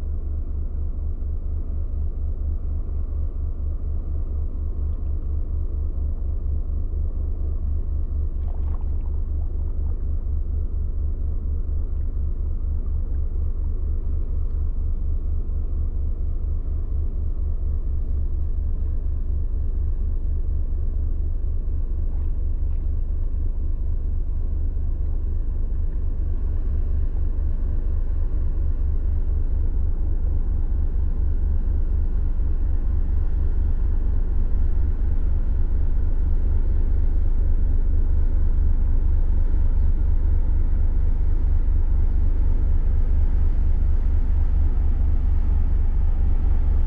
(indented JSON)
{
  "title": "Quevillon, France - Boats",
  "date": "2016-09-18 12:50:00",
  "description": "Three industrial boats are passing by on the Seine river, the Bangkok, the Jasmine C and the Orca. All these boats are going to Rouen industrial harbor.",
  "latitude": "49.42",
  "longitude": "0.94",
  "altitude": "2",
  "timezone": "Europe/Paris"
}